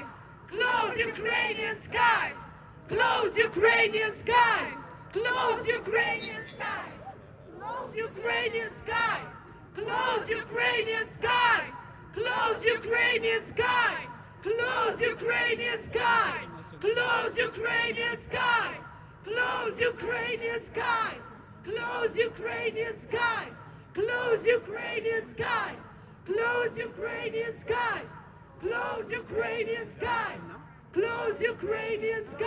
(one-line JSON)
{"title": "Place Jean Rey, Etterbeek, Belgique - Demonstration - speeches for Ukraine", "date": "2022-03-06 15:06:00", "description": "Speeches at the end of the manifestation.\nReverberation from the buildings all around.\nTech Note : Ambeo Smart Headset binaural → iPhone, listen with headphones.", "latitude": "50.84", "longitude": "4.38", "altitude": "50", "timezone": "Europe/Brussels"}